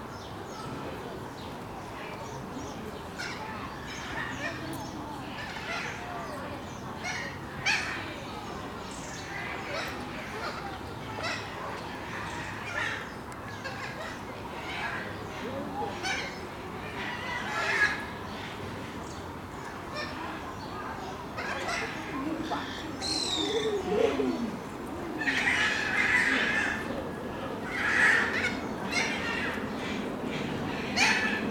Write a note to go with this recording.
Lisbon zoo ambiance, people, animals, birds